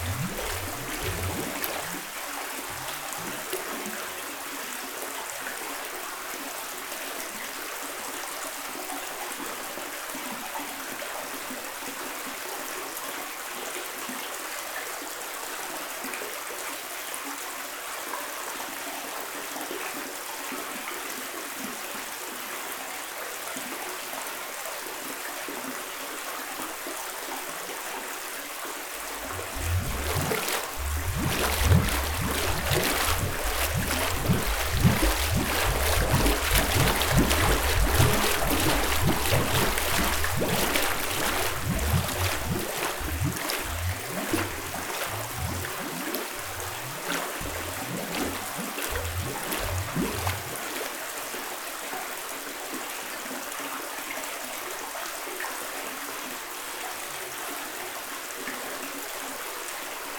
Gembloux, Belgique - Strange bubbles
In an underground mine, a very strange bubbles system, in a water tank. This is natural and this comes back naturally every 50 seconds. But why ??? In fact, it was funny.